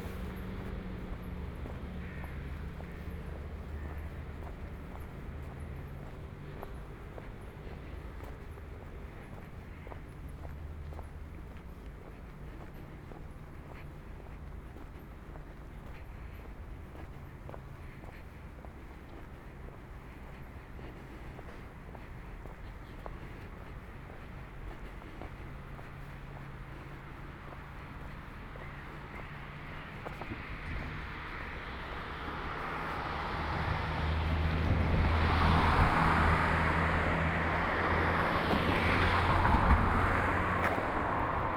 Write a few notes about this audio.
Monday March 16th 2020. San Salvario district Turin, to Valentino park and back, six days after emergency disposition due to the epidemic of COVID19. Start at 6:17 p.m. end at 7:20 p.m. duration of recording 1h'03’00”, Walking to a bench on riverside where I stayed for about 10’, from 6:35 to 6:45 waiting for sunset at 6:39. The entire path is associated with a synchronized GPS track recorded in the (kmz, kml, gpx) files downloadable here: